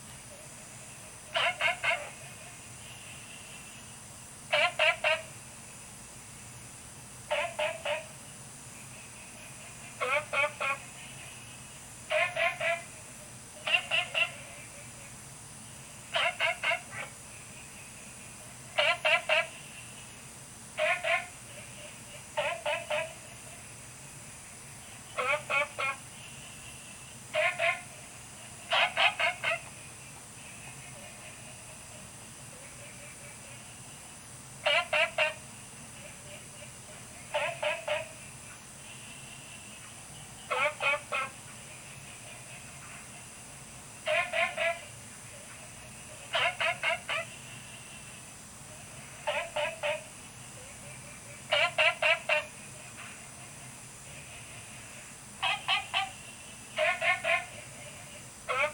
青蛙ㄚ 婆的家, Puli Township - Frogs chirping
Frogs chirping, Insects sounds
Zoom H2n MS+ XY